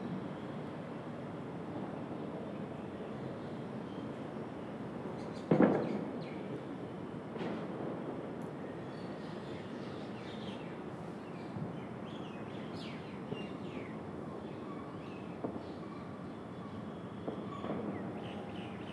Rao Tularam Marg, West End Colony, Block C, Vasant Vihar, New Delhi, Delhi, India - 16 Concrete Fall
Recording of a distant motorway construction sounds.
18 February, 10:13